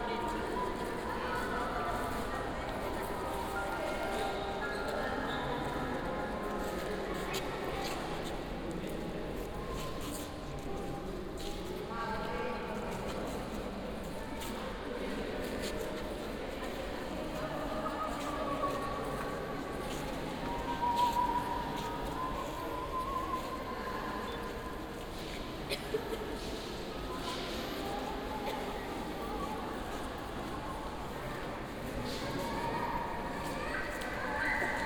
Madrid, Parque del Retiro, Crystal Palace - trailer instalation
(binaural) airy ambience of the Cristal palace. perplexed visitors looking at and discussing an art installation located in the middle of the hall.
30 November 2014, 15:17